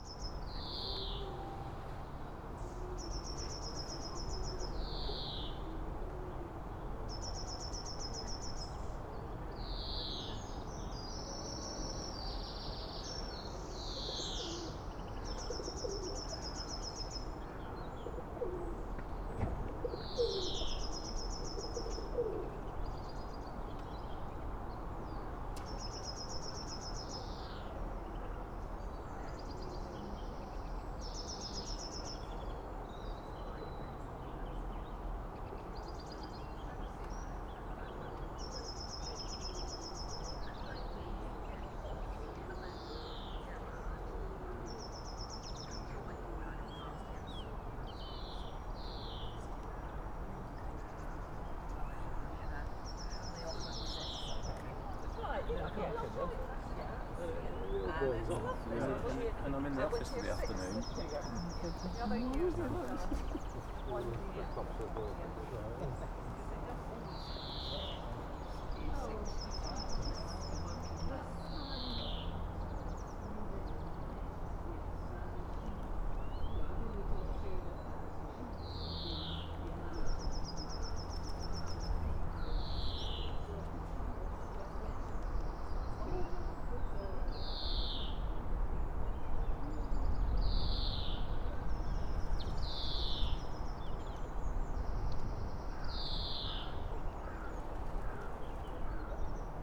Rewlwy Rd., Oxford, UK - on bridge, ambience
on the bridge, Rewley Rd., early spring morning ambience
(Sony PCM D50)